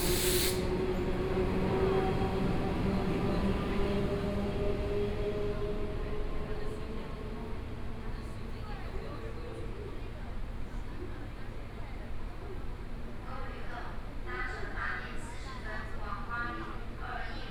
Taipei Main Station, Taiwan - In the station platform
In the station platform, Zoom H4n + Soundman OKM II